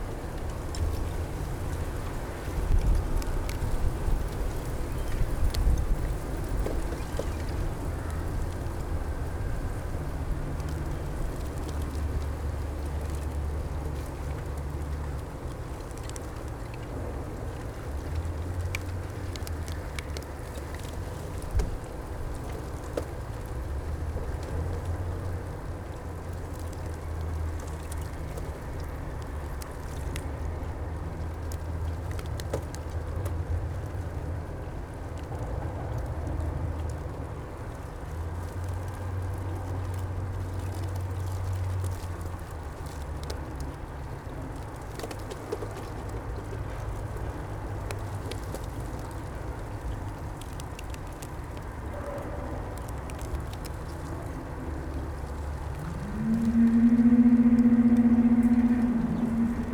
sunny and very cold afternoon, river Spree partly frozen, ice cracks and industrial ambience
(Sony PCM D50, DPA4060)